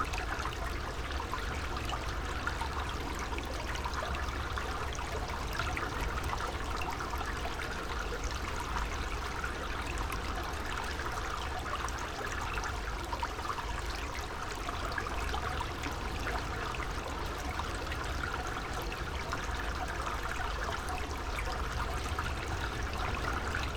water flowing from a culvert ... SASS ... background noise ... dog walkers etc ...
England, UK, July 2019